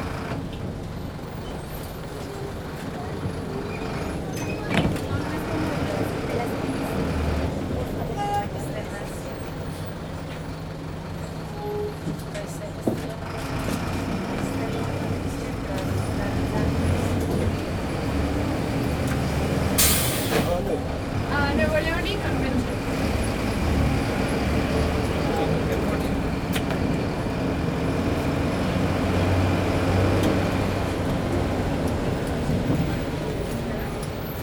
Bus leaving one of the most crowded bus stations in the city.